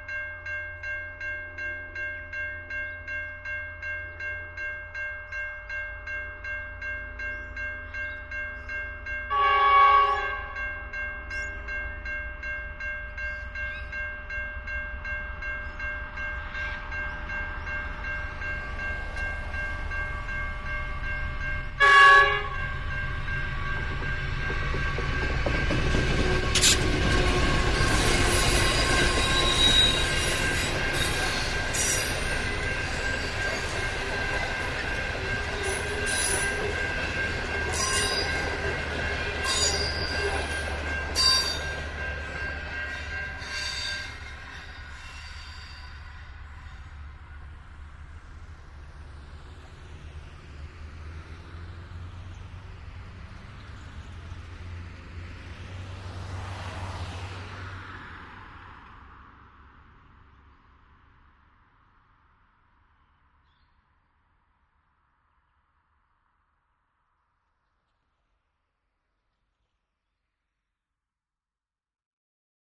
{"title": "Overland Train to Melbourne, 8:45am, Littlehampton, South Australia, - Overland Train to Melbourne 8:45am", "date": "2008-09-05 05:56:00", "description": "Every day the Overland Train between Adelaide & Melbourne passes here.\nMon, Wed & Fri it passes at about 8:45am heading east to Melbourne, Victoria.\nOn Tue, Thu & Sat it passes at about 4:45pm on it's way back to Adelaide.\nI live about 600 metres from here.\nAT-3032 stereo pair were set up on the South West corner of the T-Junction near the row of planted trees.\nRecorded at 8:45am on Friday 5 September 2008", "latitude": "-35.03", "longitude": "138.88", "altitude": "387", "timezone": "Australia/South"}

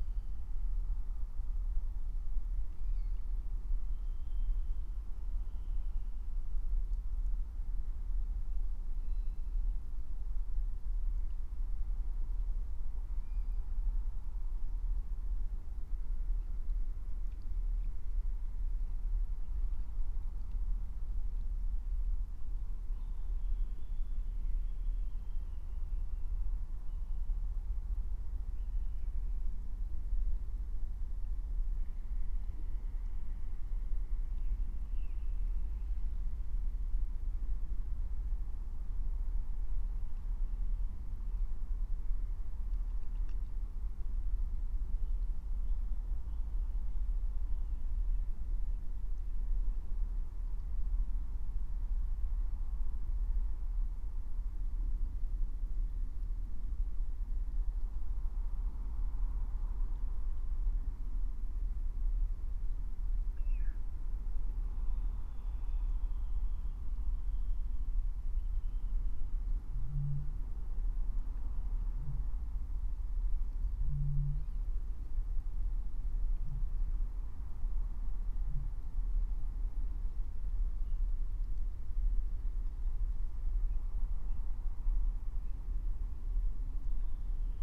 {"date": "2022-03-23 19:20:00", "description": "19:20 Walberswick, Halesworth, Suffolk Coastal Area - wetland ambience", "latitude": "52.30", "longitude": "1.64", "altitude": "1", "timezone": "Europe/London"}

